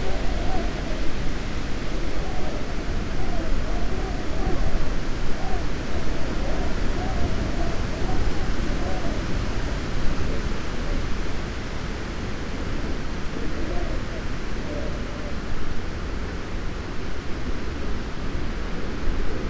path of seasons, information sign, piramida - howling wind
Maribor, Slovenia